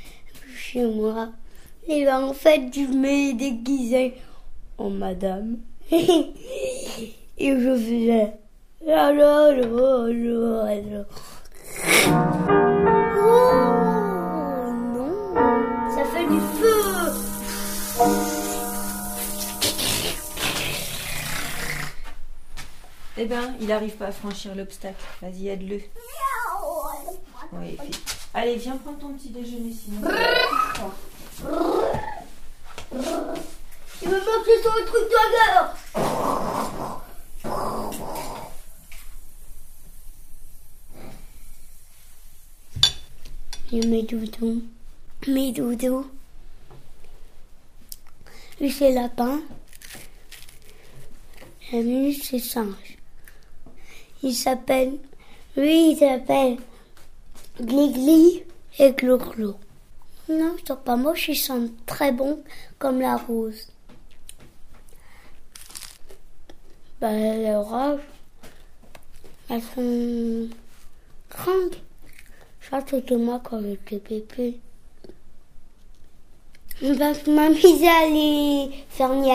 {"title": "Neuflize - Le petit-déjeuner de Félix", "date": "2017-07-05 19:05:00", "description": "Félix prend son petit-déjeuner et se raconte...", "latitude": "49.41", "longitude": "4.30", "altitude": "82", "timezone": "Europe/Paris"}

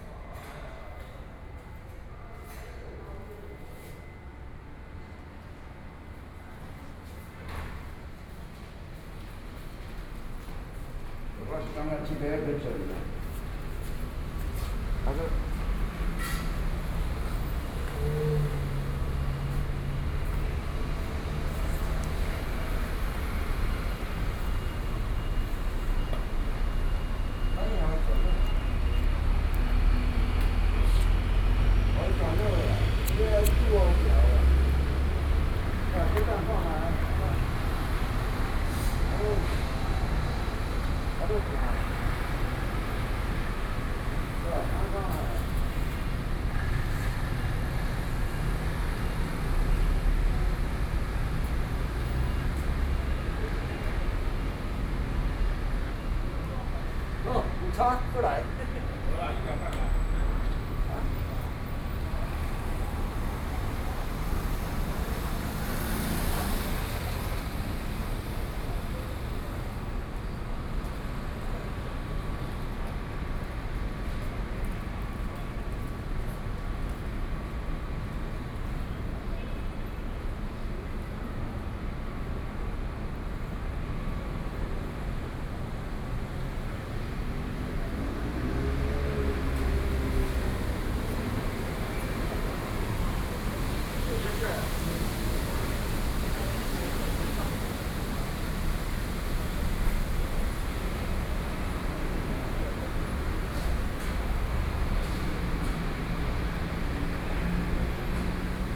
{"title": "Neihu, Taipei - gallery's entrance", "date": "2013-07-09 16:45:00", "description": "In the gallery's entrance, Workers are repairing the door, Traffic Noise, Sony PCM D50 + Soundman OKM II", "latitude": "25.08", "longitude": "121.57", "altitude": "10", "timezone": "Asia/Taipei"}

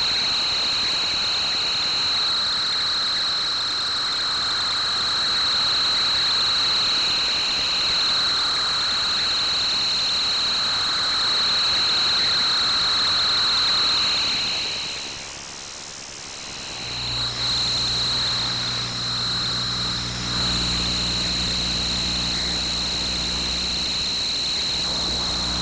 {"title": "Aukštupėnai, Lithuania, screen EMF", "date": "2022-09-03 14:50:00", "description": "Electromagnetic fields of information screen. Captured with SOMA Ether", "latitude": "55.85", "longitude": "24.98", "altitude": "77", "timezone": "Europe/Vilnius"}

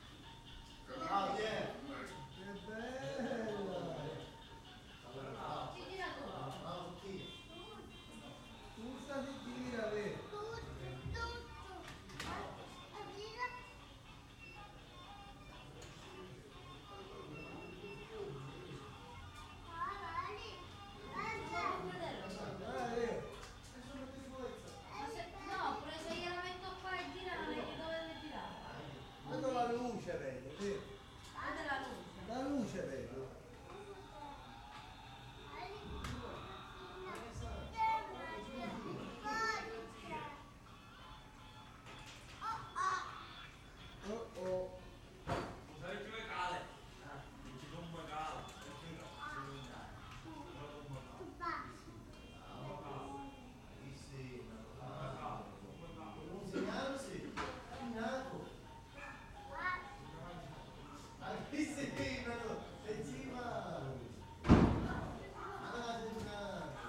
{"title": "Via Ambra, Palermo PA, Italy - 22 01 16 palermo via ambra room 04 street chat", "date": "2022-01-16 21:39:00", "description": "Ambient recording at this location using a Zoom h5 and a matched pair of Clippy EM272 high sensitivity omni-directional low noise microphone's. Audio contains chatter from the surrounding neighbours in these narrow lanes where they shout across to each other.", "latitude": "38.12", "longitude": "13.36", "altitude": "18", "timezone": "Europe/Rome"}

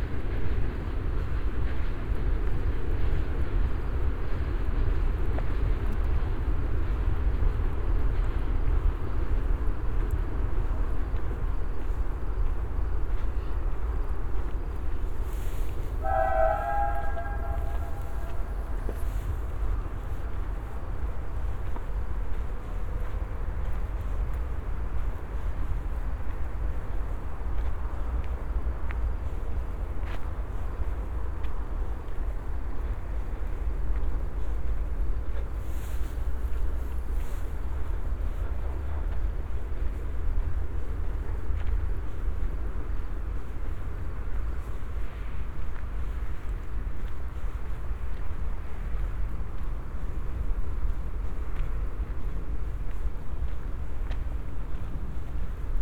{"title": "Poznan, Morasko, field road - evening chorus", "date": "2015-05-29 21:32:00", "description": "(binaural) evening walk along a flied road on the outskirts of Poznan. crickets on boths sides of the road. the noisy drone comes from a heavy weight train. even though it was late evening the local traffic was still strong and making a lot of noise.", "latitude": "52.47", "longitude": "16.90", "altitude": "102", "timezone": "Europe/Warsaw"}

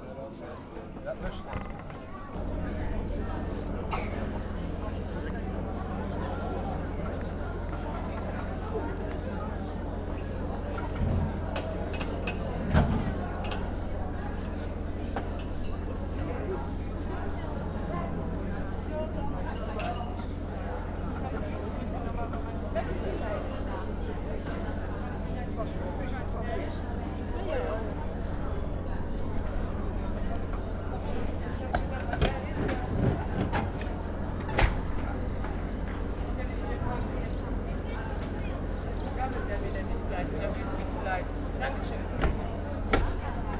{"title": "hupperdange, street festival, craftsperson", "date": "2011-08-02 18:47:00", "description": "On the street handcrafted building of bird breeding boxes. In the background people drinking and laughing.\nHupperdange, Straßenfest, Handwerker\nAuf der Straße handwerkliches Bauen von Vogelnestern. Im Hintergrund trinken und lachen Menschen.\nAufgenommen von Pierre Obertin während eines Stadtfestes im Juni 2011.\nHupperdange, fête de rue, artisans\nFabrication artisanale de nids d’oiseaux dans la rue. Dans le fond, on entend des gens qui boivent et qui rient.\nEnregistré par Pierre Obertin en mai 2011 au cours d’une fête en ville en juin 2011.\nProject - Klangraum Our - topographic field recordings, sound objects and social ambiences", "latitude": "50.10", "longitude": "6.06", "altitude": "504", "timezone": "Europe/Luxembourg"}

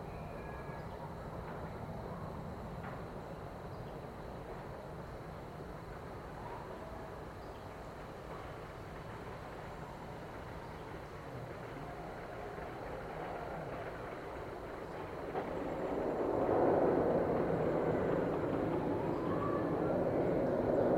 {"title": "Screamin Eagle, Pacific, Missouri, USA - Screamin Eagle", "date": "2021-05-29 15:58:00", "description": "In honor of the 50th anniversary of Six Flags St. Louis, I made multiple recordings in the woods of sounds from the amusement park as I descended the hill to the park from the Rockwoods Towersite off Allenton Road. This was the closest recording of the Screamin' Eagle wooden roller coaster.", "latitude": "38.52", "longitude": "-90.68", "altitude": "218", "timezone": "America/Chicago"}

